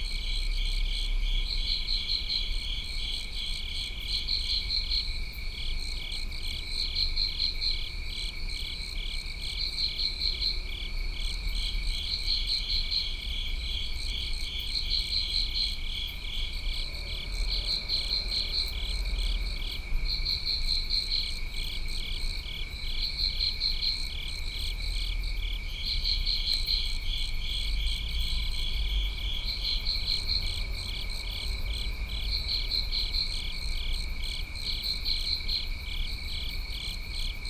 귀뚜라미 X 배수관 crickets within a covered drain
귀뚜라미 X 배수관_crickets within a covered drain